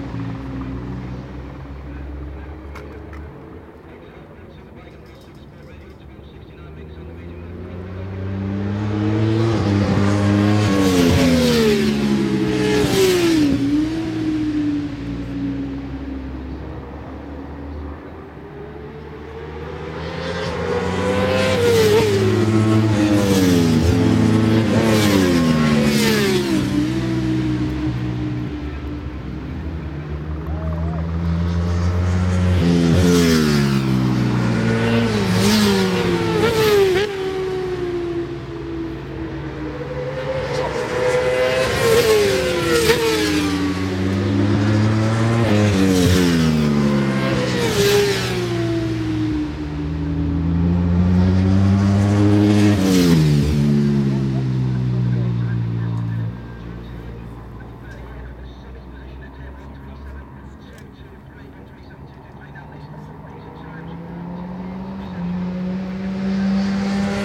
Brands Hatch GP Circuit, West Kingsdown, Longfield, UK - WSB 1998 ... Superbikes ... Qual ...
World Superbikes 1998 ... Superbikes ... qualifying ... one point stereo mic to minidisk ... the days of Carl Fogarty in his pomp ...
1 August, 11:00